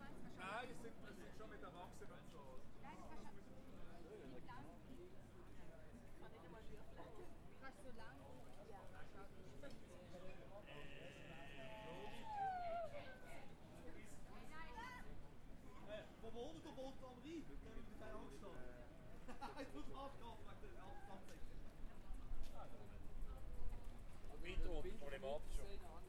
Altstadt Grossbasel, Basel, Schweiz - evening walk towards Kleinbasel
H4n Zoom, walk through old city over Wettsteinbrücke and then left
Basel, Switzerland